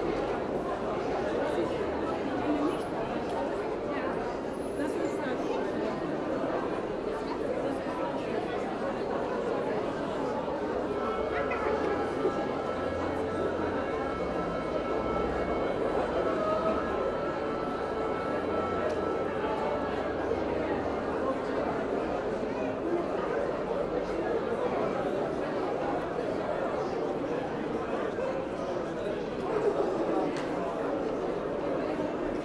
bus terminal, Wrocław - emergency alarm
sudden alarm and building evacuation at Wroclaw bus terminal, back to normal after a few minutes, without notice
(Sony PCM D50)